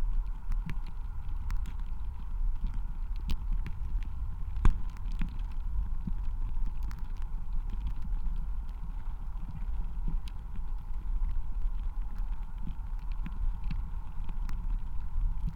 Siaudiniai, Lithuania, contact with tiny ice
lost hope for winter...but there were minus 1-2 degrees C last night, so it formed tiny ices on small rivers. contact microphone on the ice.